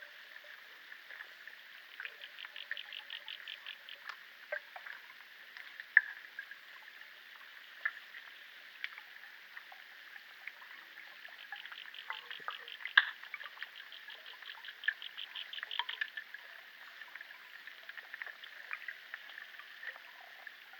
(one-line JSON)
{"title": "Voverynė, Lithuania, lake underwater", "date": "2020-06-22 17:50:00", "description": "underwater sounds in the lake", "latitude": "55.54", "longitude": "25.62", "altitude": "152", "timezone": "Europe/Vilnius"}